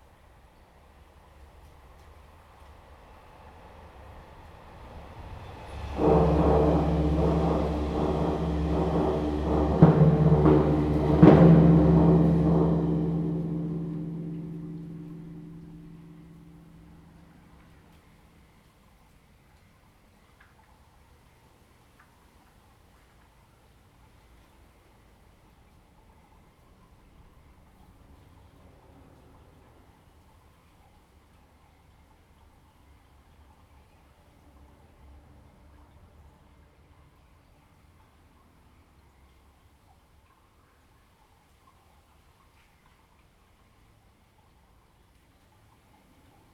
中華路五段375巷, Xiangshan Dist., Hsinchu City - In the channel below the track
In the channel below the track, Water flow sound, The train passes by, Zoom H2n MS+XY
30 August 2017, ~13:00